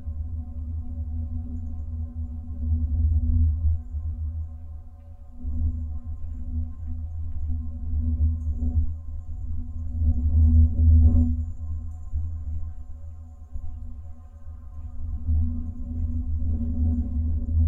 Leliūnų sen., Lithuania, fence's support
small omnis placed inside the supporting pipe of metallic fence
4 March, 13:55